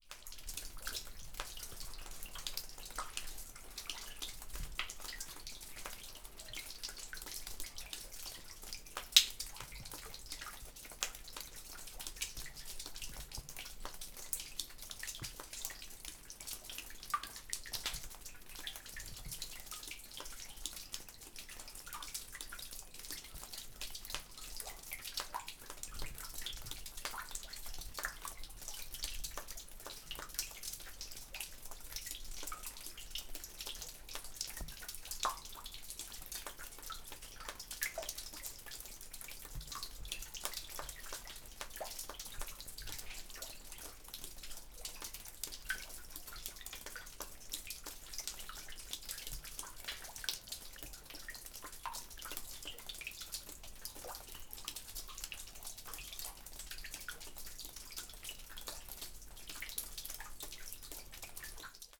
water trickling through the rocks in the narrow, low tunnel.